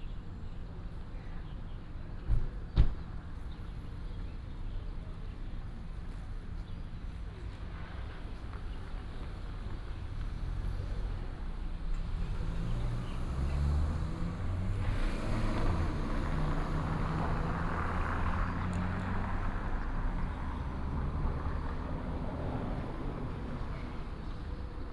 THSR Chiayi Station, 太保市崙頂里 - in the station square
in the station square, Bird call, Traffic sound, Taxi driver